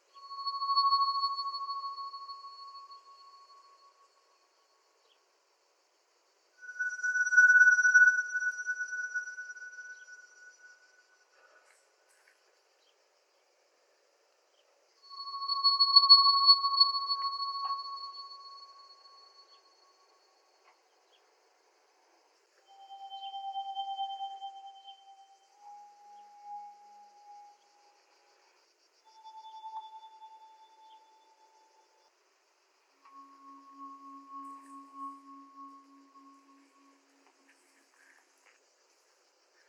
Hlevnik, Dobrovo v Brdih, Slovenia - BIOACOUSTICS SOUNDS OF OLIVES & OLIVE TREE
Bio Acoustics Sound Recordings Of Olive Tree in Hlevnik, Goriska Brda in the hot summer afternoon in July 2020. I recorded the session of Olive Tree Bio Data Recording Signals.
Ableton Live Software
BioData Recording Device